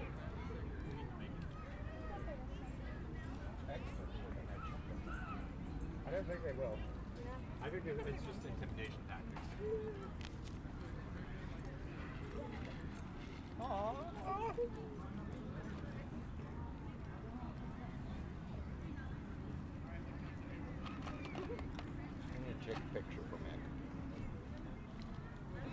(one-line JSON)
{"title": "Hollister Ave, Santa Barbara, CA, USA - Walk Through the Pumpkin Patch", "date": "2019-10-21 20:30:00", "description": "This is a walk through the Lane Farms Pumpkin Patch on a Monday night in October. I walked through a petting zoo and around the farm on a semi-crowded night with a mixture of people of all different ages.", "latitude": "34.43", "longitude": "-119.80", "altitude": "18", "timezone": "America/Los_Angeles"}